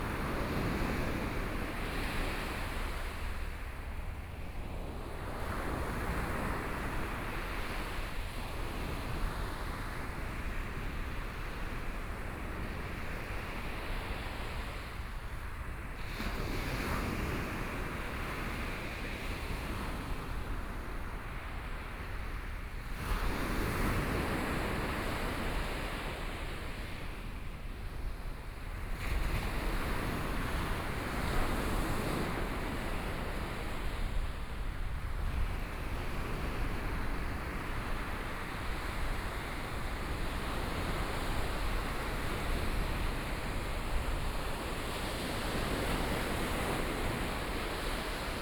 旗津海水浴場, Kaoshiung City - Walking on the beach
Walking on the beach, Sound of the waves, Hot weather, Tourist